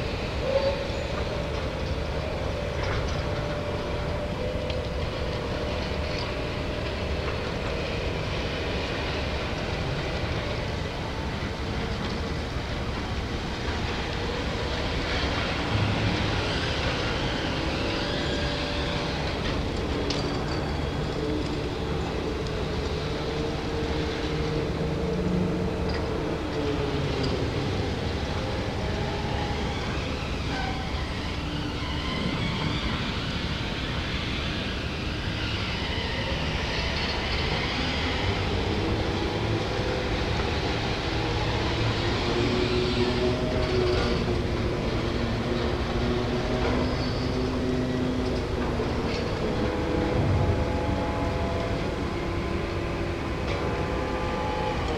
Two large cranes transferring cargo (sand?) from a sea-going vessel onto a river barge. Zoom H2.